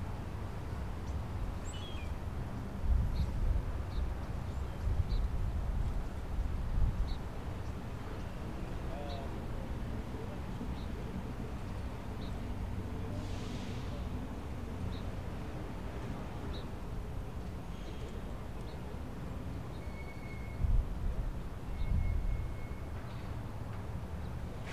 Edmonds Waterfront
A typical bustling weekend morning at this popular waterfront park north of Seattle. A constant stream of characters come and go while birds fight over their leftovers.
This was the start of a series (Anode Urban Soundscape Series) of phonographic recordings, made with my new Sony MZ-R30 digital MiniDisc recorder, and dubbed to Compact Disc.
Major elements:
* SCUBA divers preparing to dive on Edmonds' underwater park
* Cars and trucks (mostly old) coming in, parking, and leaving
* Two ferries docking in the distance and unloading
* Construction work underway on the new ferrydock
* Seagulls, pigeons and crows
* A bicyclist coasting through
* A man walking past with an aluminum cane